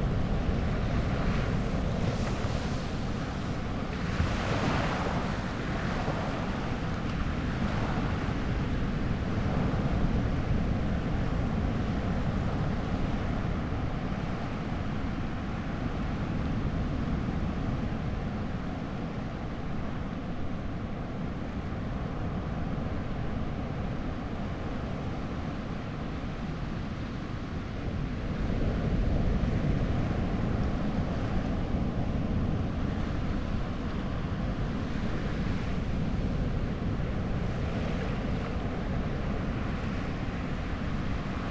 Cooks Landing, Atiu Island, Cookinseln - Pacific late in the evening low tide
Same beach, same day but late in the evening. Lower tide and less wind resulting in a much weaker surf and thus less roar. Dummy head Microphopne facing seaward, about 6 meters away from the waterline. Recorded with a Sound Devices 702 field recorder and a modified Crown - SASS setup incorporating two Sennheiser mkh 20 microphones.
31 July, 21:12